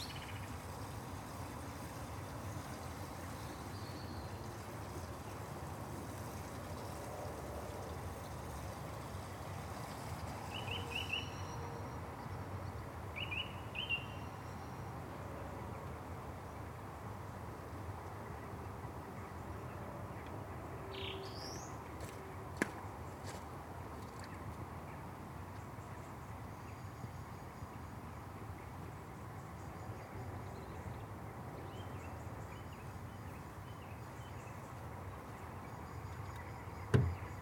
No. 1 Henley Cottage, Acton Scott, Shropshire, UK - Birds, Wind and Chores, recorded from the casement window
This is a recording made from the casement windows of an old Victorian cottage in which I was staying in order to record the sounds of the domestic interior of a period property. It was quite cold and dark and I was ill when I was there. But when I was organising my things for the final night of my stay, I noticed the amazing bird sounds from the window of the bedroom on the very top floor. It was an incredible sound - the starlings passing, the wind howling, even the blurry and annoying sounds of the traffic and planes on nearby roads... I wanted to record it. There is a lot of wind in the recording and I could have had the levels a little higher to get a better noise/signal ratio, but the starlings passing over at around 5-6 minutes in are amazing. You can hear me going out to the coal bunker as well, and filling the enamel water jug. Recorded with FOSTEX FR-2LE using Naiant X-X omni-directionals.